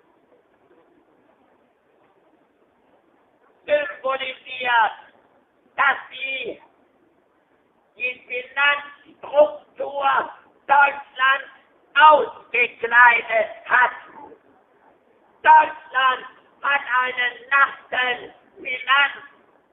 Braunschweig, der Stadtprediger zum Besuch von Frau Merkel